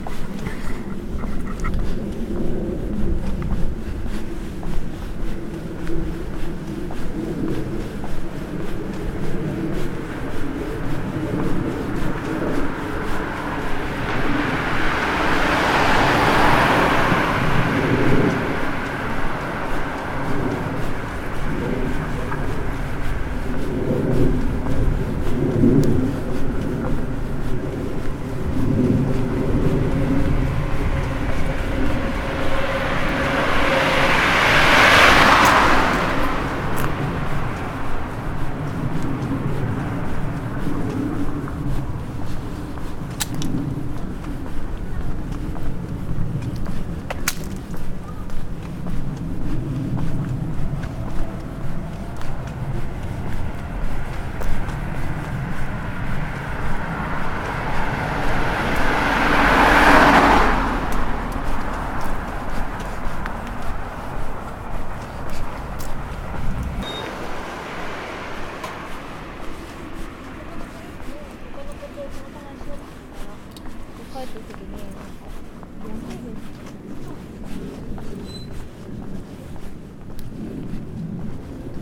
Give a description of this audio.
this is one of my first recordings with my new sony PCM D50 recorder; I was walking in south-southeastern direction; the recording started at the marked point; latitude: 35.82823783098033, longitude: 139.90608483552933) Japan Präfektur ChibaMatsudoShinmatsudo, ５丁目